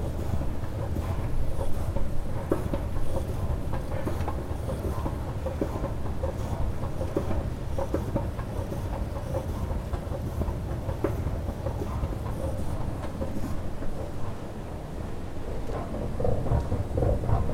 Perugia, Italy - escalator
close miking of the escalator